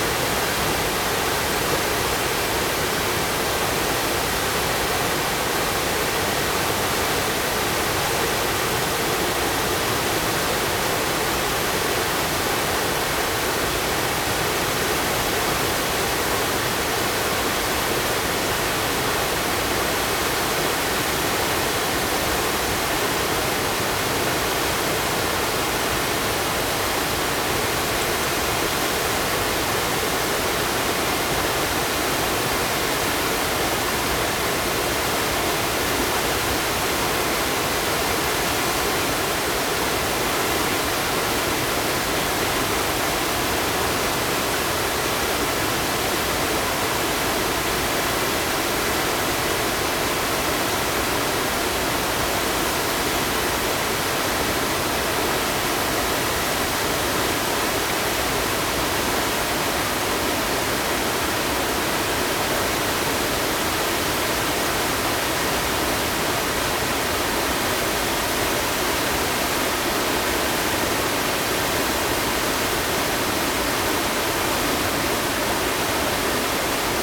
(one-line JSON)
{"title": "猴洞坑瀑布, 礁溪鄉白雲村, Jiaoxi Township - waterfall", "date": "2016-12-07 12:41:00", "description": "stream, waterfall\nZoom H2n MS+ XY", "latitude": "24.84", "longitude": "121.78", "timezone": "GMT+1"}